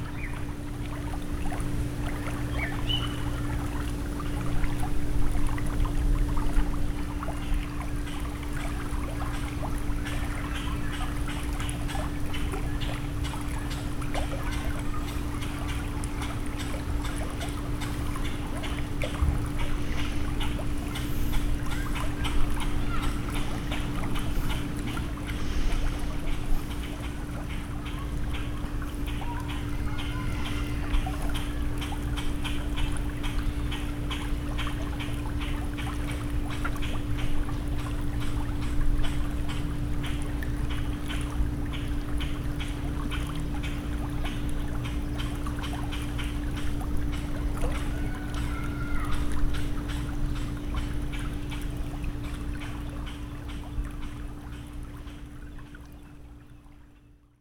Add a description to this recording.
A garden, Sag Harbor Hills, Sag Harbor NY. Using Olympus LS12.